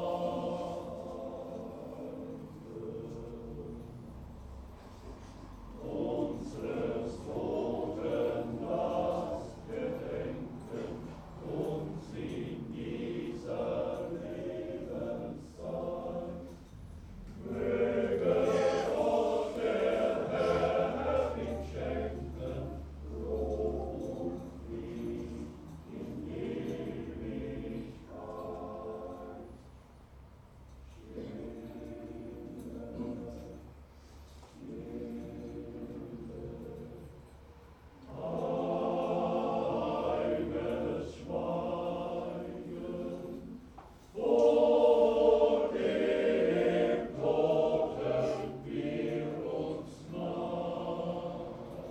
funeral, choir, hot summer day.
Runkel, Deutschland